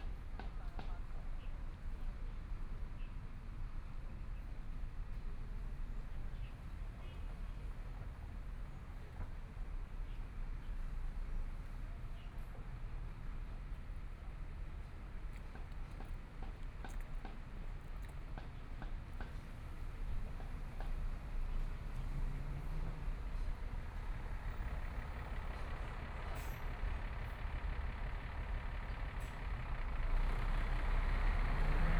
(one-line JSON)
{"title": "慈濟醫院, Hualien City - Outside the hospital", "date": "2014-02-24 10:24:00", "description": "Outside the hospital, Birds sound, Traffic Sound, Environmental sounds\nPlease turn up the volume\nBinaural recordings, Zoom H4n+ Soundman OKM II", "latitude": "23.99", "longitude": "121.59", "timezone": "Asia/Taipei"}